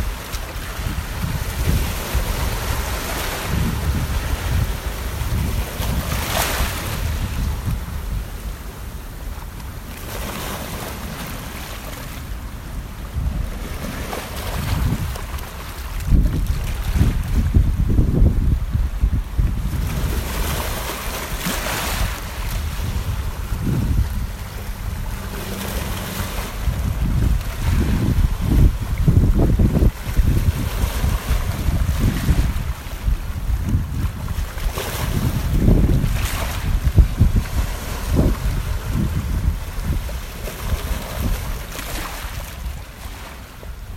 Beach recorded for a course project. The audio is unedited except for fade in and fade out.